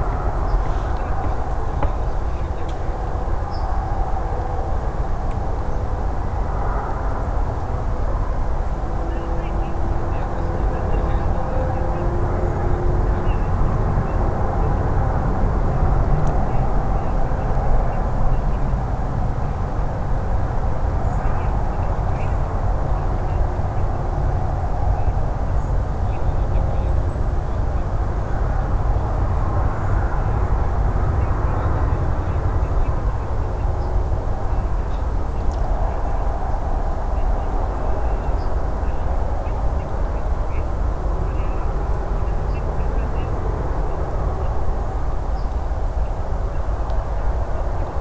Sounds of lake Žirgų g., Utena, Lithuania - Sounds of lake
Sounds of lake
11 October 2018